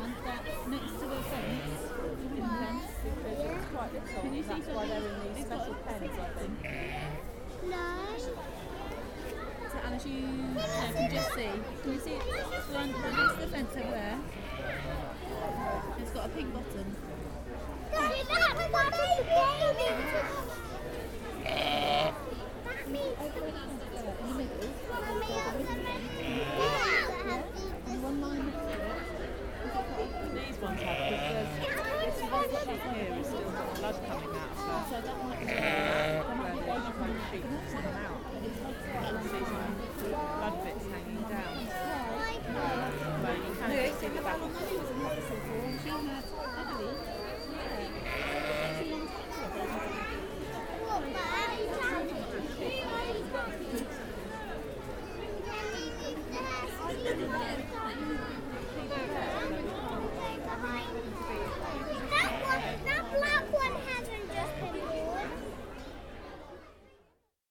The Lambing barn, Amners Farm, Burghfield, UK - Ewes and lambs, parents and children
This barn is full of pregnant ewes, and recently lambed ewes paired up in pens with their babies. Chris Webber was telling me he'd been up at 5am on the morning of the open day to deliver several sets of twins and triplets. The ewes are all sitting on lovely clean straw, patiently waiting, with huge sides. The recently lambed ewes are there with their babies, licking and cleaning them, and getting them to suckle. It's an amazing place to witness new life, but it's also very real and unromantic and I really enjoyed hearing the many conversations around me with parents explaining where babies come from. The ewes have a much deeper sound than the lambs, but you can occasionally hear the tiny bleatings of a just-born sheep in this recording.
Burghfield, Reading, UK, 6 May, 13:54